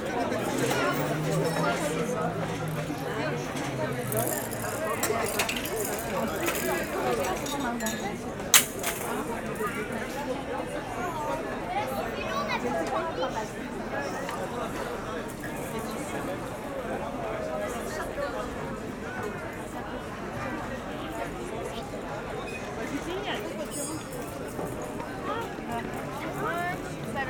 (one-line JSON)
{"title": "Le Mans, France - Public holiday", "date": "2017-08-14 17:45:00", "description": "A public holiday evening, a lot of people are in the bars, drinking and enjoy the sun.", "latitude": "48.00", "longitude": "0.20", "altitude": "68", "timezone": "Europe/Paris"}